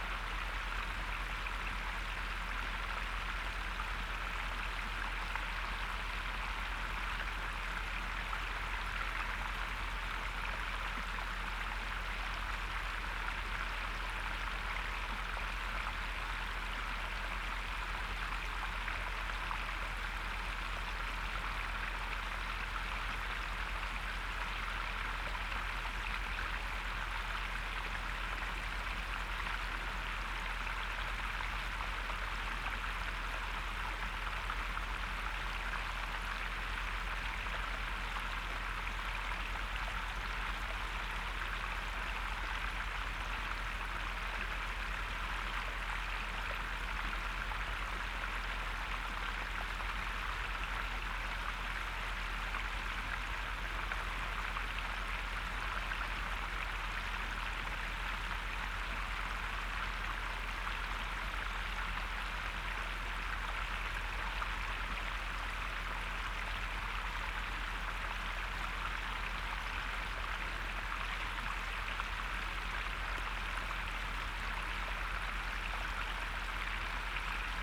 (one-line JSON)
{"title": "Weißwasser, Germany - Water pump 9", "date": "2016-10-18 17:10:00", "description": "Water needs to be constantly pumped from the ground around the mine area to prevent flooding. Along this road there is a pump every 100 meters or so.", "latitude": "51.49", "longitude": "14.61", "altitude": "169", "timezone": "Europe/Berlin"}